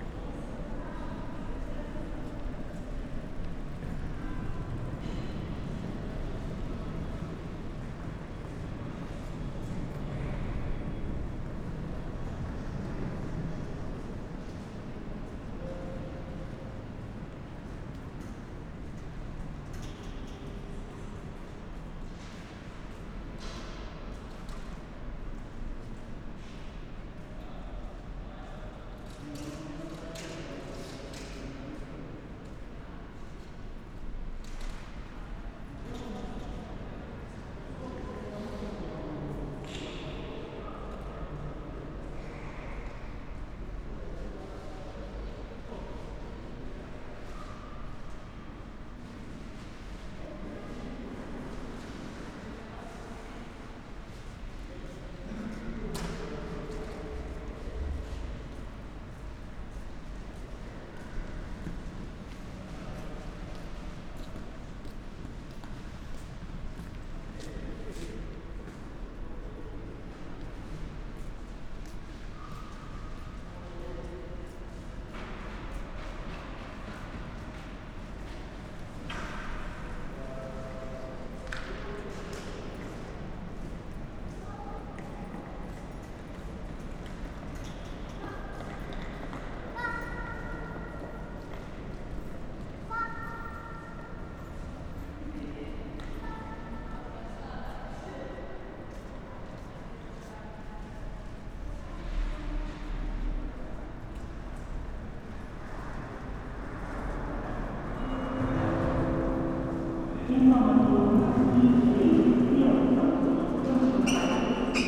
Oldenburg Hauptbahnhof, main station ambience, rush hour in corona/ covid-19 times
(Sony PCM D50, Primo EM172)